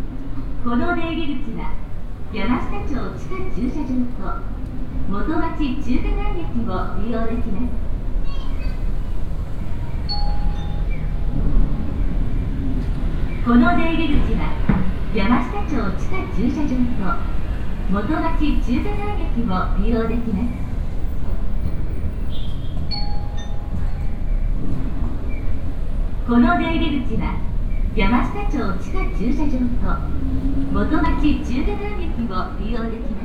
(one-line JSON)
{"title": "yokohama, parking garage, announcement", "date": "2011-06-28 18:02:00", "description": "Inside the entrance of a parking garage. A repeated female automatic voice announcement.\ninternational city scapes - topographic field recordings and social ambiences", "latitude": "35.44", "longitude": "139.65", "altitude": "8", "timezone": "Asia/Tokyo"}